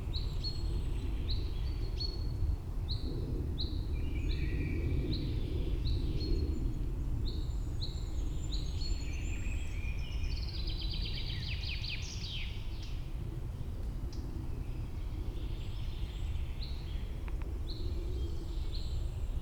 Boowald - sound atmo on a normal day in spring
This the sound atmosphere during a normal day in spring: Birds, airliners cracking sounds in the forest.